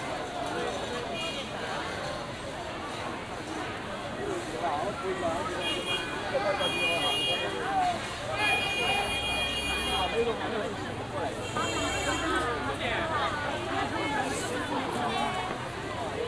Nan'an, Chongqing, Chiny - Soundwalk on market street
Soundwalk on market street
Binaural Olympus LS-100